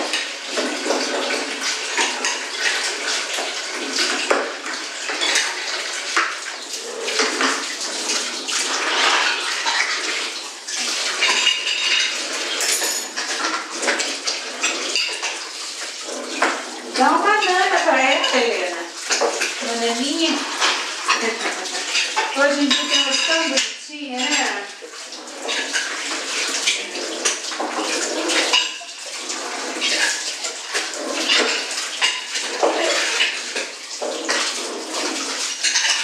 sound of farm marmalade kitchen, Poços de Caldas - Rabelo, Poços de Caldas - MG, Brasil - sound of farm marmalade kitchen
This soundscape archive is supported by Projeto Café Gato-Mourisco – an eco-activism project host by Associação Embaúba and sponsors by our coffee brand that’s goals offer free biodiversity audiovisual content.
Região Sudeste, Brasil, 7 April 2022